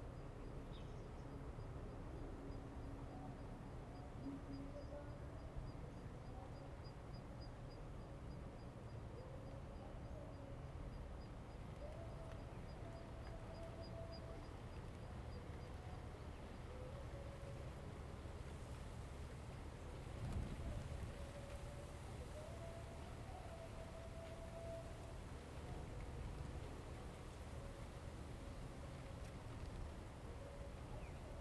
福文村, Chihshang Township - Near the station
Near the station, Train arrival and departure, Very hot weather
Zoom H2n MS+ XY